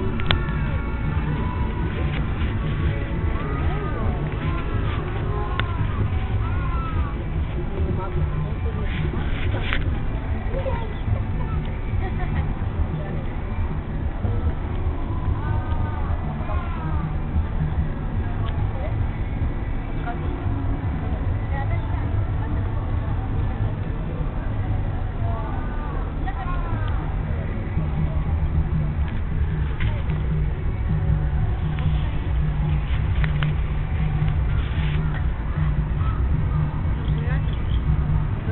sound at the closed kiosk /imbiss
Shibuya, Jinnan, ２丁目１ 都道413号線